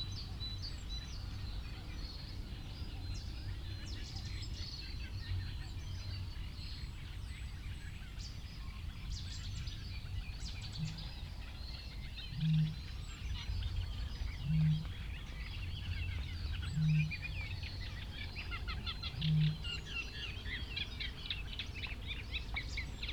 London Drove, United Kingdom - cettis warbler soundscape ...
cetti's warbler soundscape ... pre-amped mics in a SASS to Olympus LS 14 ... bird calls ... song ... from ... reed bunting ... bittern ... cuckoo ... reed warbler ... blackbird ... wren ... crow ... some background noise ...
19 April